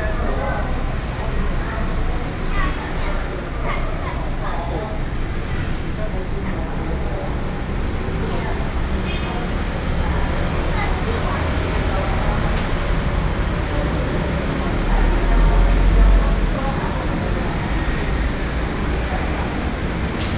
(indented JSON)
{
  "title": "Yonghe District, New Taipei City - Father and child conversation",
  "date": "2012-09-29 13:01:00",
  "description": "Father and child conversation, Sony PCM D50 + Soundman OKM II",
  "latitude": "25.01",
  "longitude": "121.52",
  "altitude": "15",
  "timezone": "Asia/Taipei"
}